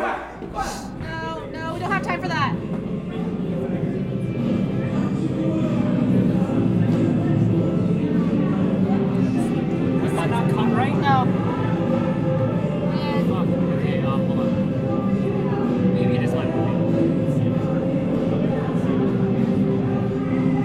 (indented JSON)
{
  "title": "UMC Glennmiller Ballroom - VSA Prepares for their Tet Show",
  "date": "2013-02-02 16:55:00",
  "description": "The Vietnamese Student Association of Boulder prepare for their annual Lunar New Year Show (Tet Show).",
  "latitude": "40.01",
  "longitude": "-105.27",
  "altitude": "1660",
  "timezone": "America/Denver"
}